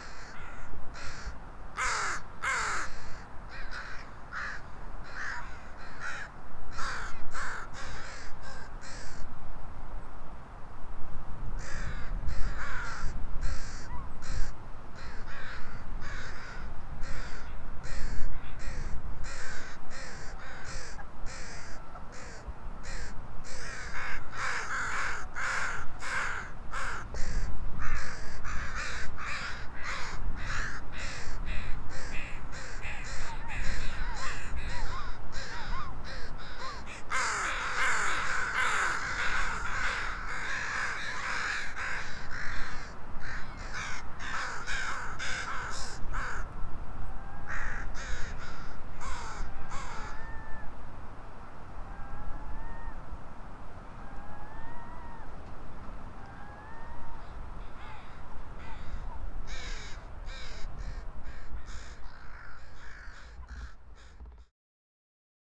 When I arrived at the Crows's Tavern ...
BC, Canada, 17 December 2013, 09:45